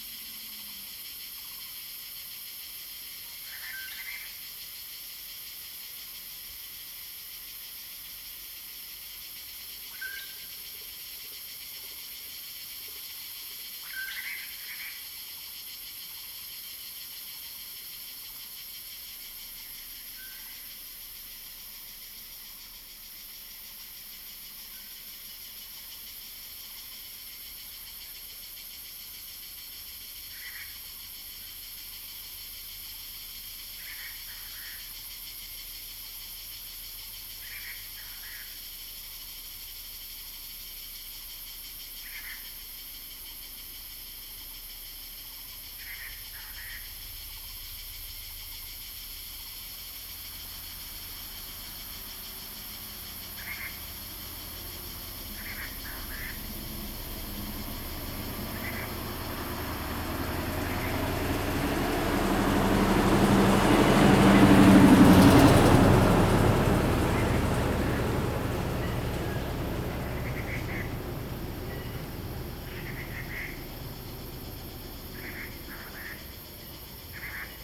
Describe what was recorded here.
Bird sounds, Cicadas cry, In the woods, Zoom H2n MS+XY